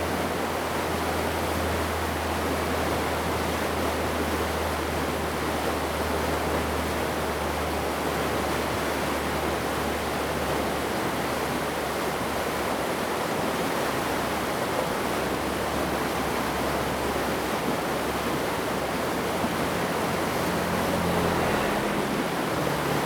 福興村, Ji'an Township - waterways
Streams of sound, Very Hot weather, Farmland irrigation waterways
Zoom H2n MS+XY
Hualien County, Ji-an Township, 花23鄉道, 28 August 2014, 08:31